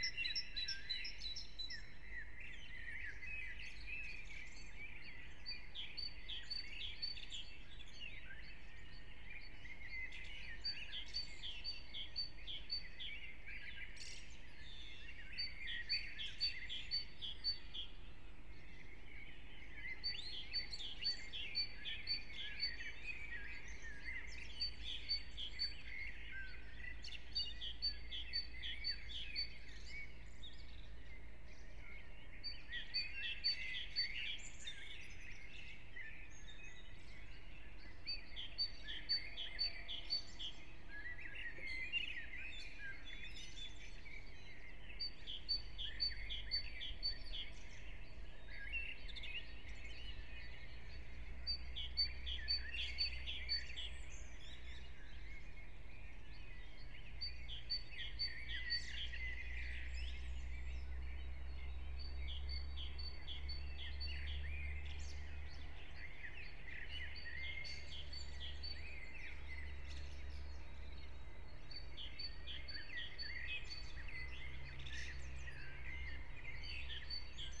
04:30 Brno, Lužánky
(remote microphone: AOM5024/ IQAudio/ RasPi2)